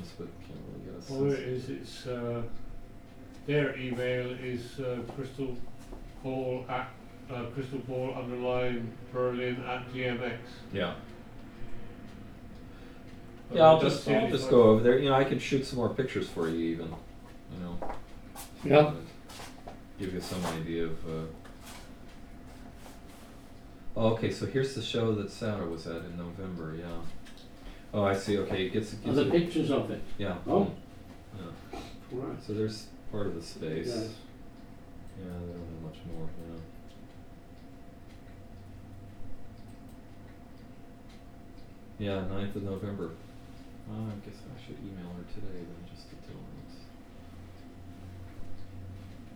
neoscenes: Rod, Magnús, and I